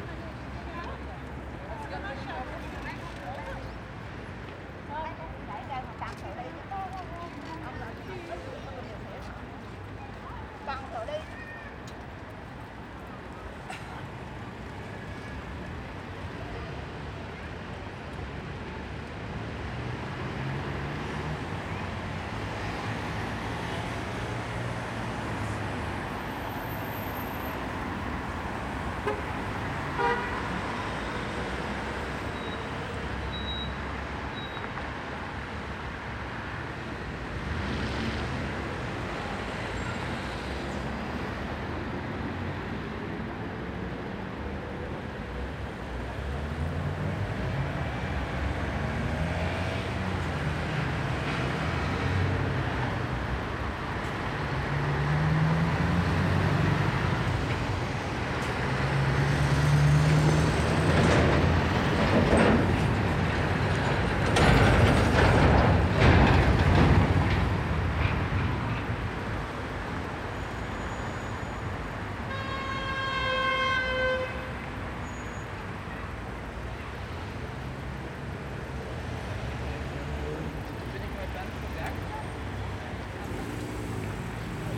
Gesundbrunnen, Wedding, Berlin - At U Pankstraße, crossroads Prinzenallee Badstraße
At U Pankstraße, crossroads Prinzenallee Badstraße.
[Hi-MD-recorder Sony MZ-NH900 with external microphone Beyerdynamic MCE 82]